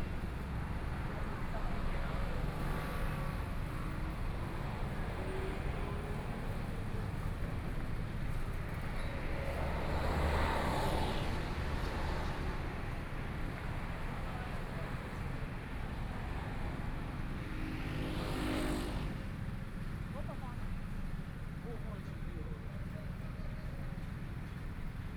Nong'an St., Taipei City - At intersection
At intersection, the sound of music is Garbage trucks traveling through, Traffic Sound, Binaural recordings, Zoom H4n+ Soundman OKM II